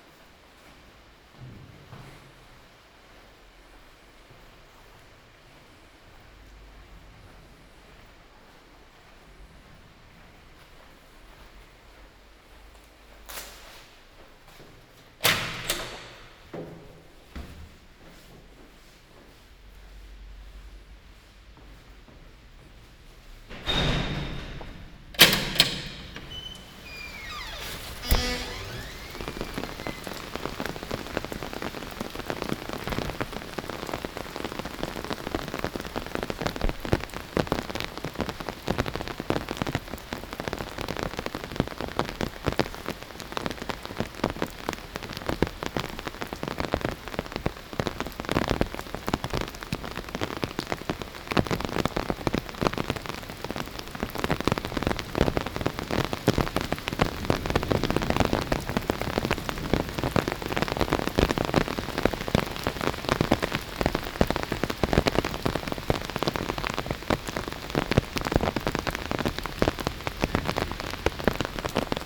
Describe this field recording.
“Posting postcards, day 1 of phase 3, at the time of covid19” Soundwalk, Chapter CVIII of Ascolto il tuo cuore, città. I listen to your heart, city. Monday, June 15th 2020. Walking to mailbox to post postcard, San Salvario district, Turin, ninety-seven days after (but day forty-three of Phase II and day thirty of Phase IIB and day twenty-four of Phase IIC and day 1st of Phase III) of emergency disposition due to the epidemic of COVID19. Start at 8:19 p.m. end at 8:40 p.m. duration of recording 20’39”, As binaural recording is suggested headphones listening. The entire path is associated with a synchronized GPS track recorded in the (kml, gpx, kmz) files downloadable here: This is the first day and first recording of Phase III of the COVID-19 emergency outbreak.